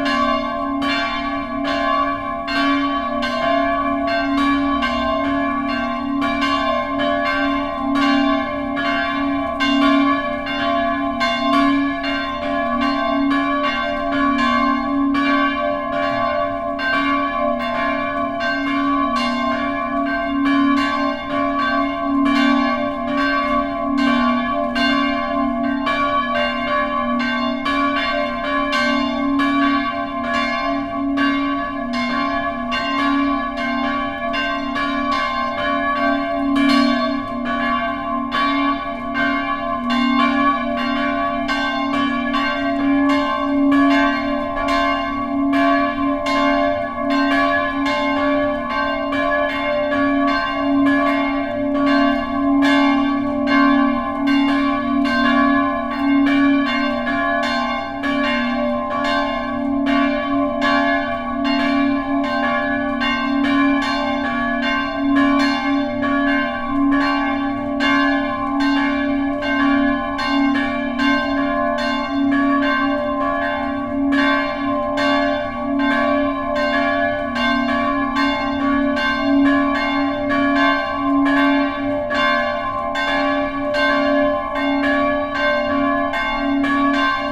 vianden, trinitarier church, bells
At the bellroof - a second recording of the same church bells - this time with OKM headphone microphones.
Vianden, Trinitarier-Kirche, Glocken
Im Glockendach der Kirche. Das Klicken des elektrischen Schalters, dann die Glocken der Trinitarier-Kirche.
Vianden, église de la Sainte-Trinité, cloches
A l’intérieur du clocher de l’église. Le cliquetis du panneau de contrôle électrique puis les cloches de l’église de la Sainte-Trin
Project - Klangraum Our - topographic field recordings, sound objects and social ambiences
Vianden, Luxembourg, 9 August 2011, 15:59